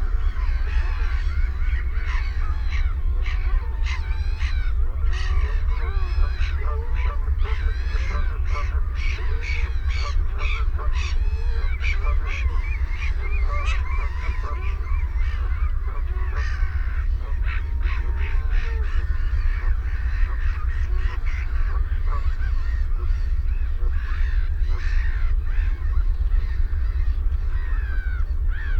Stone Cottages, Woodbridge, UK - Belpers Lagoon soundscape ... late evening ...
Belpers Lagoon soundscape ... late evening ... RSPB Havergate Island ... fixed parabolic to minidisk ... calls from ... herring gull ... black-headed gull ... sandwich tern ... avocet ... redshank ... oystercatcher ... dunlin ... snipe ... ringed plover ... mallard ... shelduck ... canada goose ... background noise from shipping and planes ...
21 April